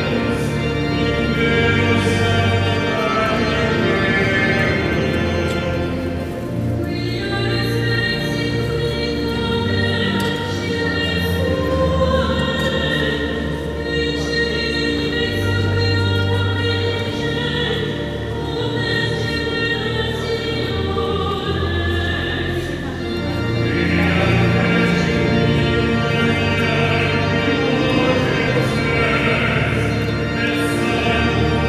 Fragment of a mass in de Cathédrale de Notre Dame (3). Binaural recording.